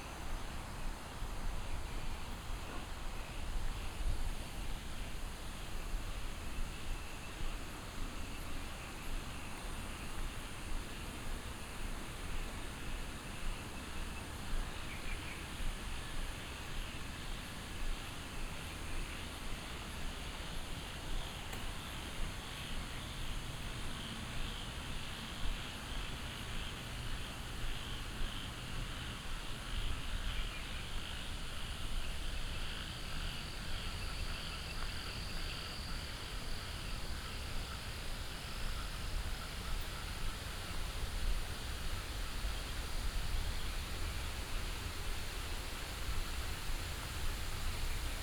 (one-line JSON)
{"title": "桃米巷, 桃米里, Puli Township - Walking on the road", "date": "2015-09-03 21:11:00", "description": "Walking on the road, Frogs chirping, Insects called, Traffic Sound", "latitude": "23.94", "longitude": "120.93", "altitude": "466", "timezone": "Asia/Taipei"}